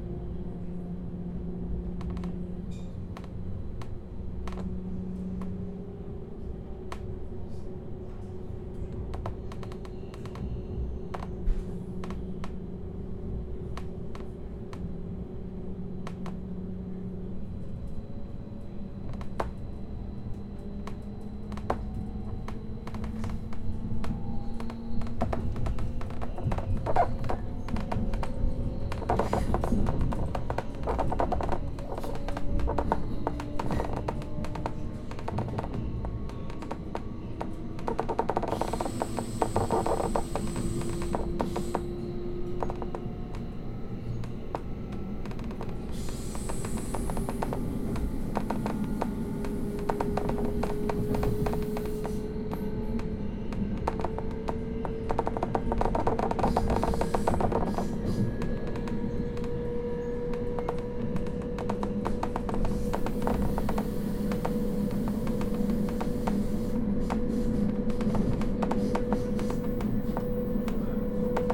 Train from Ehrenburg to St. Lorenzen - Trainsounds
The sounds of a train between two stations
Casteldarne BZ, Italy, 26 April